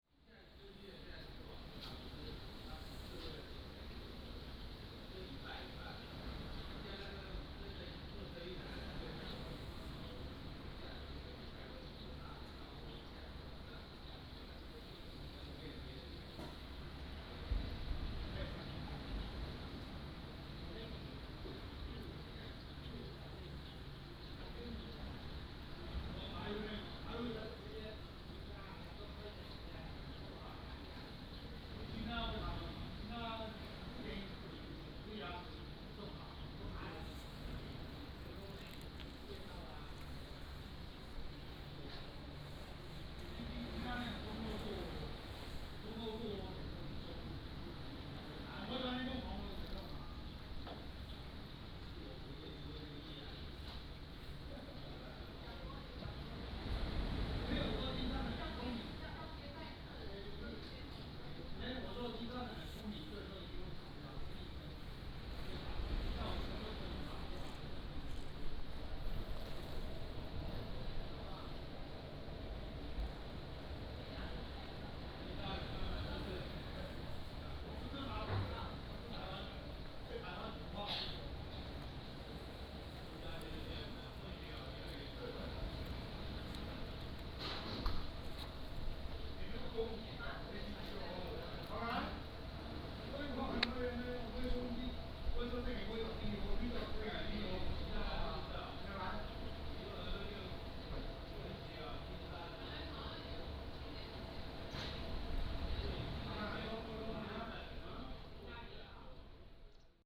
Small village, Sound of the waves, Next to a small restaurant